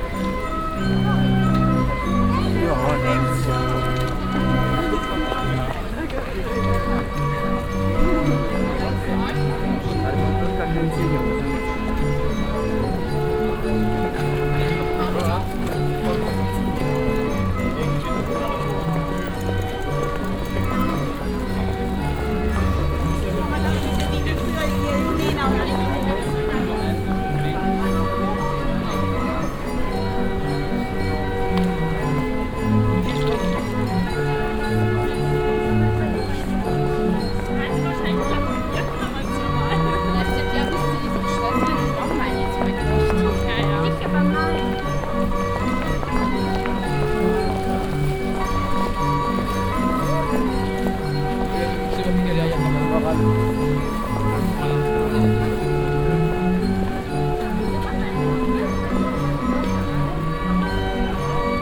cologne, schildergasse, leierkastenmann
shopping zone koeln zur weihnachtszeit unterlegt mit klängen des leierkastenspielers "laßt uns froh und lustig sein"
soundmap nrw - weihnachts special - der ganz normale wahnsinn
social ambiences/ listen to the people - in & outdoor nearfield recordings